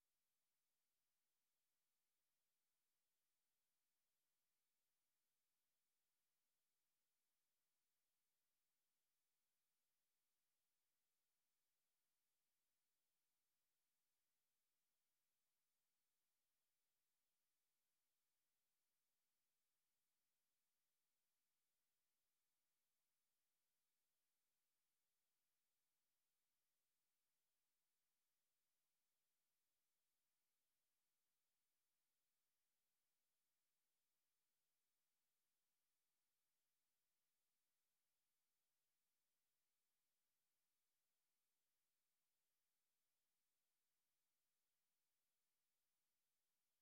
piskovna, Dolni Pocernice
sand pits, natural reservation, rec. Grygorij Bagdasarov